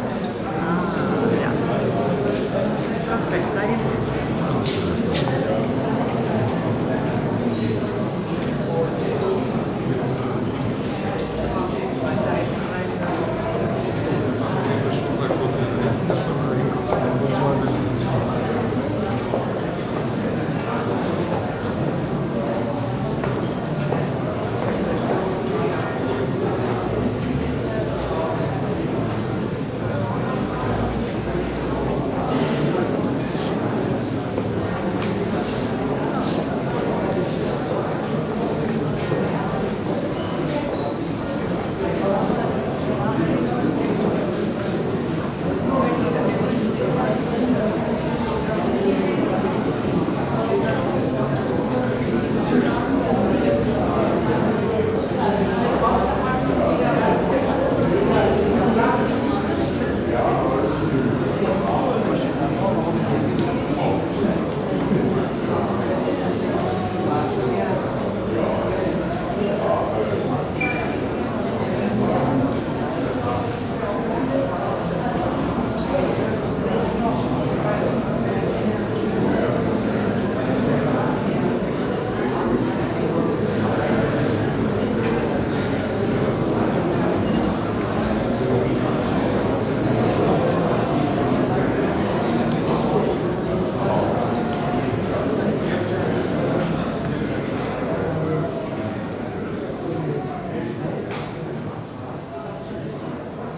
Mitte, Kassel, Deutschland - Kassel, museum Fridericanium, art audience
Inside the museum Fridericianium on the first floor during the documenta 13. The sound of the art audience in the crowded hall.
soundmap d - social ambiences, art places and topographic field recordings
Kassel, Germany, September 2012